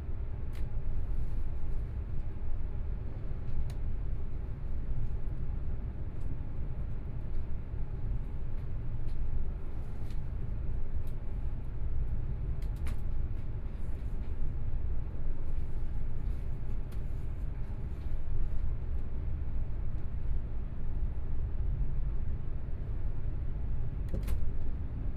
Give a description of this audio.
from Banqiao Station to Wanhua Station, Sony PCM D50 + Soundman OKM II